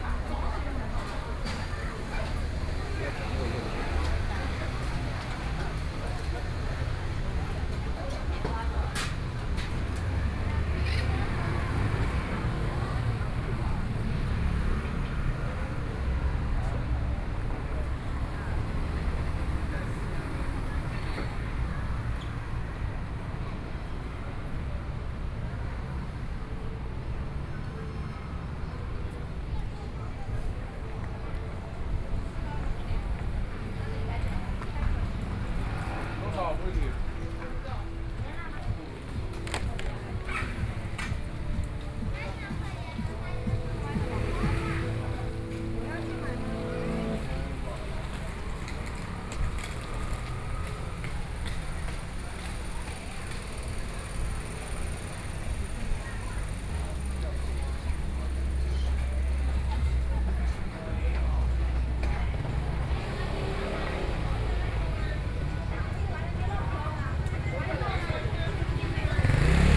Dayu St., Hualien City - walking in the Street
walking in the Street, Various shops voices, Tourists, Traffic Sound